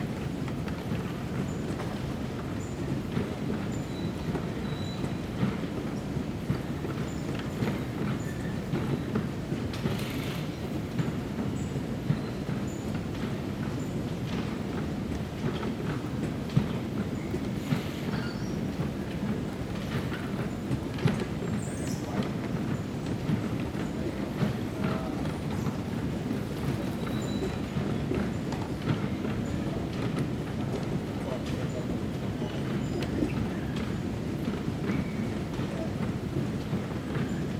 Terminal, Perth Airport WA, Australia - Baggage Claim Conveyor Belt - No Baggage.
An empty baggage carousel, with a man standing nearby. I moved away once he started talking.